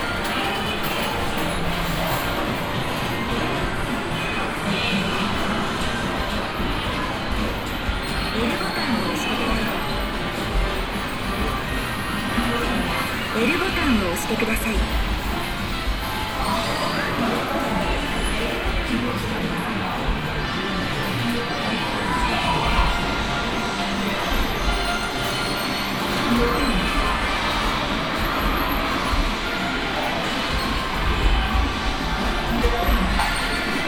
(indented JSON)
{
  "title": "yokohama, game hall",
  "date": "2011-06-28 18:10:00",
  "description": "Another recording of the same place. This time in the third floor of the building with a little different game structure.\ninternational city scapes - topographic field recordings and social ambiences",
  "latitude": "35.44",
  "longitude": "139.65",
  "altitude": "8",
  "timezone": "Asia/Tokyo"
}